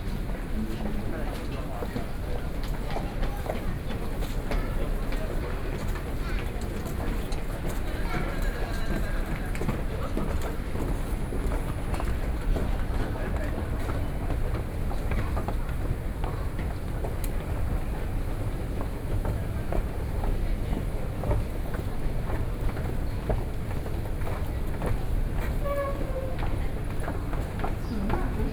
{"title": "Taipei Main Station, Taiwan - soundwalk", "date": "2012-11-03 09:52:00", "latitude": "25.05", "longitude": "121.52", "altitude": "12", "timezone": "Asia/Taipei"}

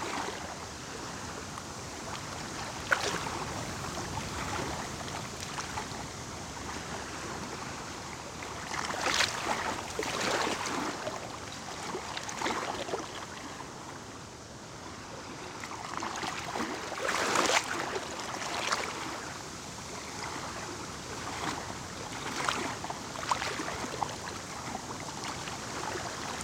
{"title": "lake Juodieji Lakajai, Lithuania", "date": "2020-06-07 15:00:00", "description": "strong wind howling. the microphones hidden in the grass at the lake.", "latitude": "55.19", "longitude": "25.64", "altitude": "154", "timezone": "Europe/Vilnius"}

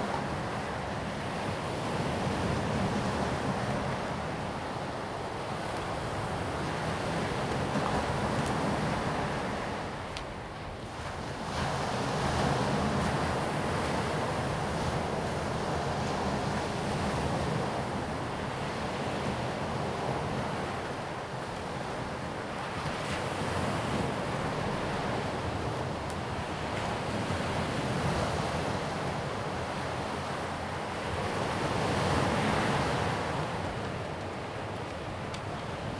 {"title": "Baishawan Beach, New Taipei City - Before the onset of heavy rains the beach", "date": "2016-04-17 07:08:00", "description": "at the seaside, Sound of the waves, Before the onset of heavy rains the beach\nZoom H2n MS+XY", "latitude": "25.28", "longitude": "121.52", "timezone": "Asia/Taipei"}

